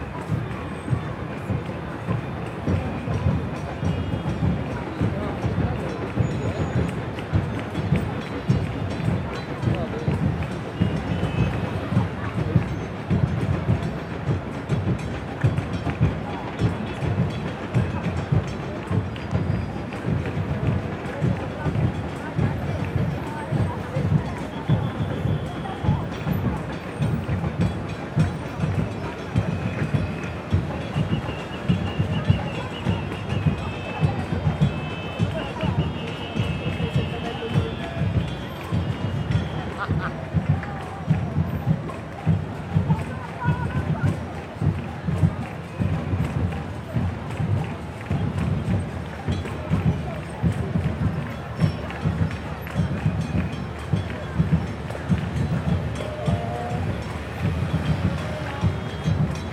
Roma, Piazzale Flaminio, Demonstrators block traffic
Roma, Piazzale Flamino, Demonstrators block traffic.
Rome, Italy, February 2011